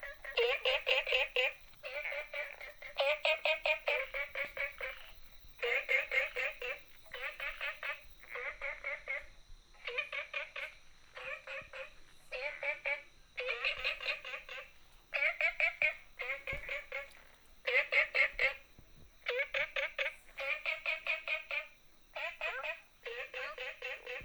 Frogs sound, small Ecological pool
Nantou County, Taiwan, 11 June 2015, 23:07